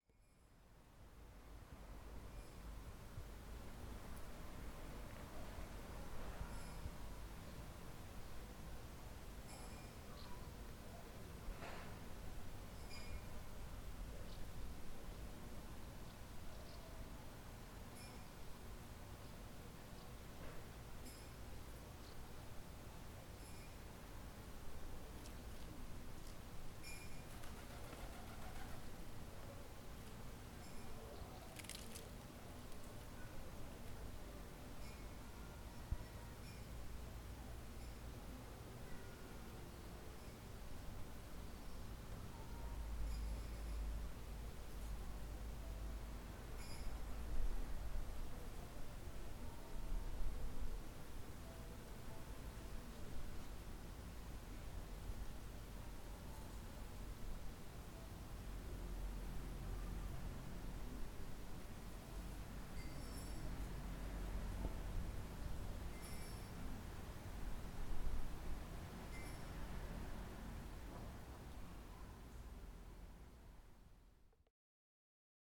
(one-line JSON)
{"title": "Arseniou, Corfu, Greece - Agia Antivouniotissa Square - Πλατεία Αγίας Αντιβουνιώτισσας", "date": "2019-04-16 11:48:00", "description": "The sound of a glass. Birds tweeting in the background.", "latitude": "39.63", "longitude": "19.92", "altitude": "16", "timezone": "Europe/Athens"}